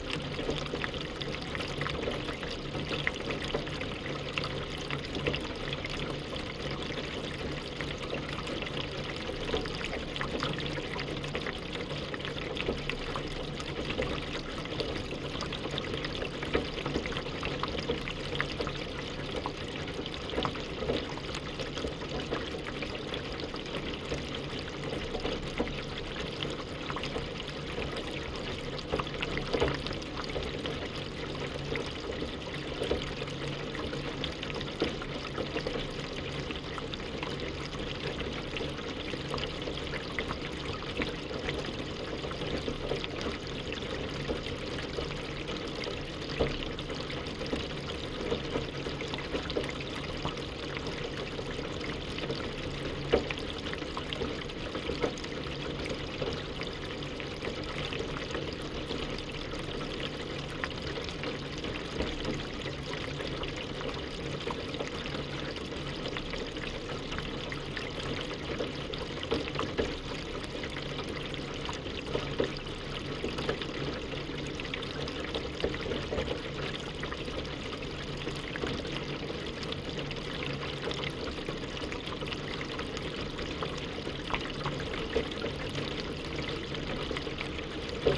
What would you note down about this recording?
Dual contact microphone recording of a drainpipe during rain.